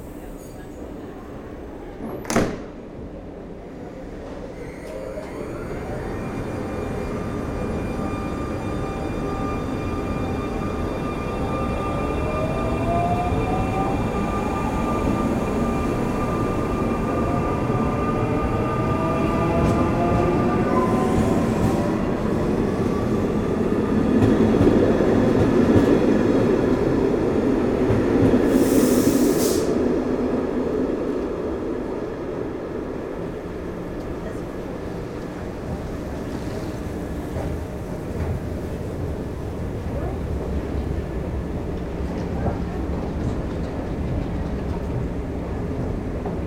At the Bruges station. Passengers are in a hurry : sound of the suitcases on the cobblestones. Entering into the station, intense reverberation in the reception hall. A person explains what to do to tourists. Walking to the platforms, intercity trains upcoming. Supervisors talking and some announcements.
Brugge, Belgium, 16 February 2019, 10:50